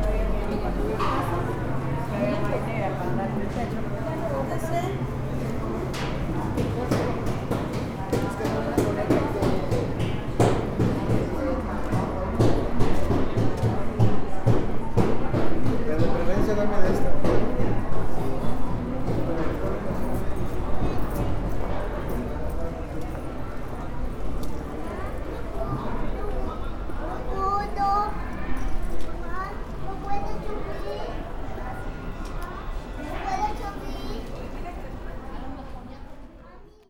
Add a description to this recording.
Walking around the market, tianguis and plaza Jardín Allende. It was a Tuesday, day that the tianguis is working together to the market that works every day. There are many businesses like a tortilla, butcher, fruit shops, and also clothes, stamen, dolls stands, and people. And much more. I made this recording on February 18th, 2020, at 2:38 p.m. I used a Tascam DR-05X with its built-in microphones and a Tascam WS-11 windshield. Original Recording: Type: Stereo, Paseando por el mercado, tianguis y plaza de Jardín Allende. Fue un martes, día en que el tianguis está trabajando junto al mercado que está todos los días. Hay muchos negocios como tortillería, carnicería, frutería, y también puestos de ropa, de muñecas, de estambre y mucha gente. Y mucho más. Esta grabación la hice el 18 de febrero 2020 a las 14:38 horas.